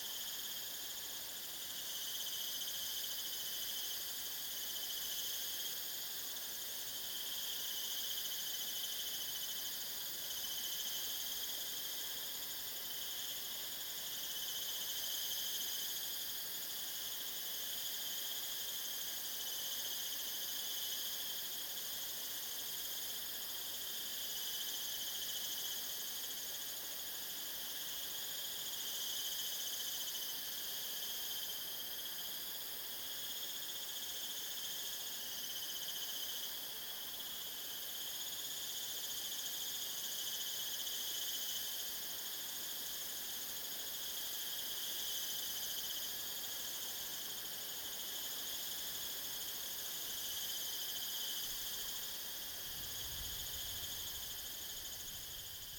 東68紹雅產業道路, Xinxing, Daren Township - the sound of cicadas
In the Mountain trail, traffic sound, Bird call, Stream sound, The sound of cicadas
Zoom H2n MS+XY